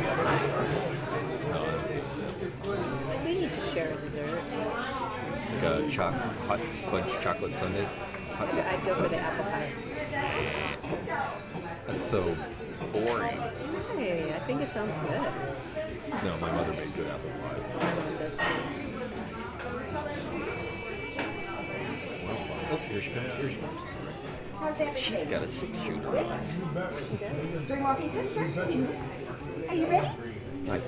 neoscenes: Bill Johnsons Big Apple Steakhouse